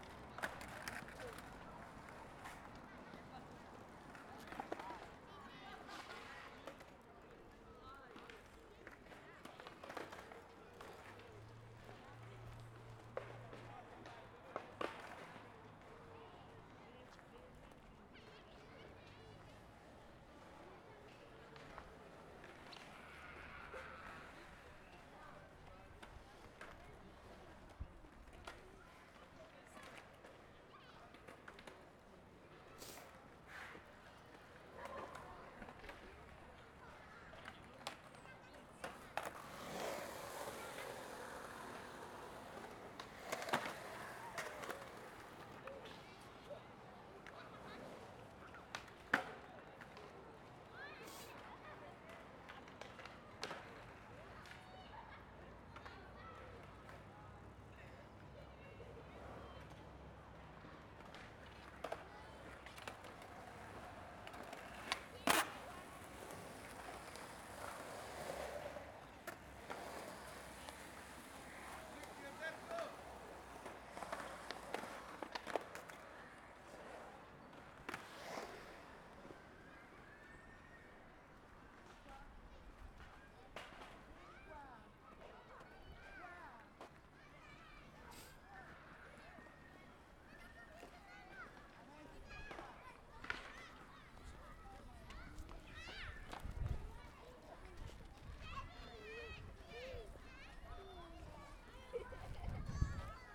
Stadspark, Antwerpen, België - Soundwalk in Stadspark Antwerpen
Soundwalk through Stadspark in Antwerp. I started my walk close to a skatepark and stayed there for a few minutes. Then I walked through the playground to the lake where they were feeding ducks and other birds. At the end I walked to the street. Only a 300m walk with different sound worlds. Also remarkable is a lot of languages: From "Plat Antwaarps" to Yiddish. It was recorded with an XY H4 stereo microphone. I used my scarf as wind protection